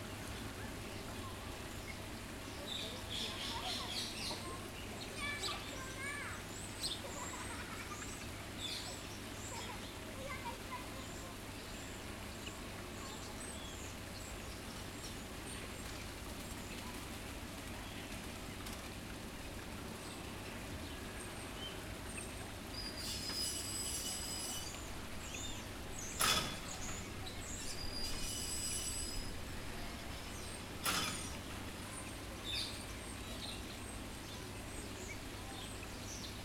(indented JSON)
{
  "title": "Jardin des Plantes, Paris, France - Volière, Zoo du Jardin des Plantes",
  "date": "2014-08-18 13:30:00",
  "description": "Ménagerie, le Zoo du Jardin des Plantes",
  "latitude": "48.84",
  "longitude": "2.36",
  "altitude": "35",
  "timezone": "Europe/Paris"
}